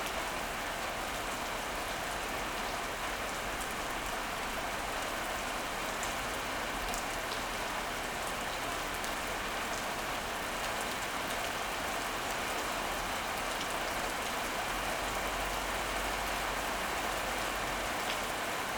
"It's one o'clock with rain in the time of COVID19" Soundscape
Chapter LXXIII of Ascolto il tuo cuore, città. I listen to your heart, city.
Monday May 11th 2020. Fixed position on an internal (East) terrace at San Salvario district Turin, sixty two days after (but eoight day of Phase II) emergency disposition due to the epidemic of COVID19.
Start at 1:14 a.m. end at 1:32 a.m. duration of recording 18’:15”